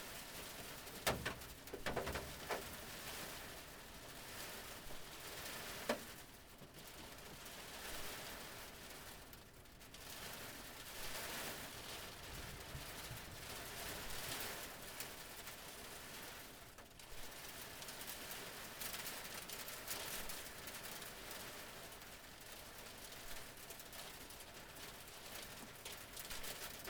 Mangerton Road, Muckross - hailstones and waterdroplets on a van roof
Recorded with a Zoom H4 inside a van. Begins with a shower of hailstone hitting the roof followed by the falling of the accumulated droplets from the tree above the vehicle. Slight hum from recorder due to age.
Co. Kerry, Ireland, 25 January 2018, 13:00